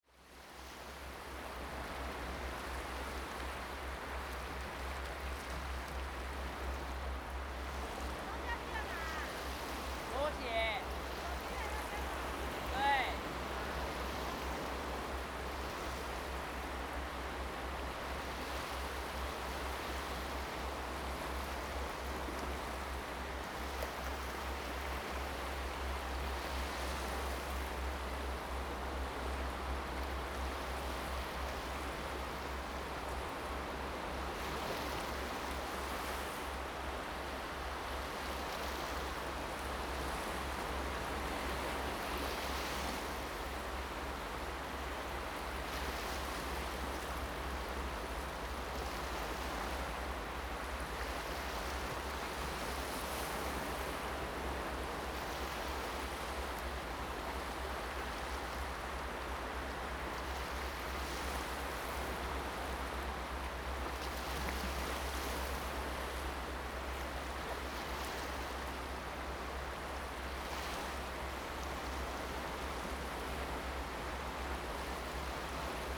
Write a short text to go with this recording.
Sound of the waves, At the beach, Zoom H2n MS+XY